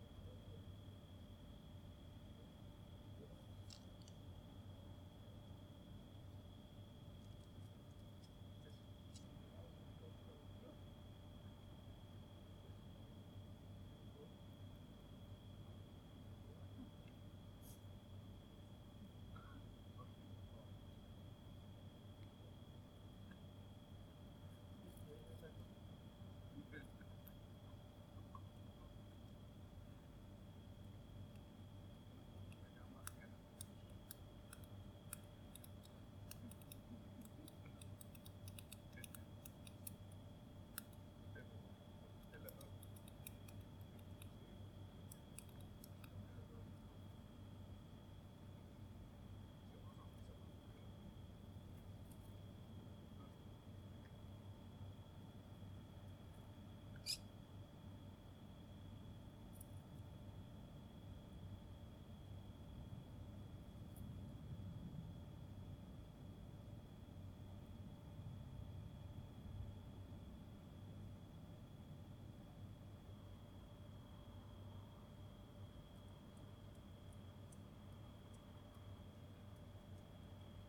What you listen is the sound of rats, as they get inside and outside the bushes, in order to eat. They were attracted by maccaroni with cheese. The recorder was placed just beside the bait, and several weird noises can be heard, including a squeak during 3.28, and some chewing later on. A noise reduction filter was applied, and an amplification of the audio. The rats where of the rattus norwegicus species.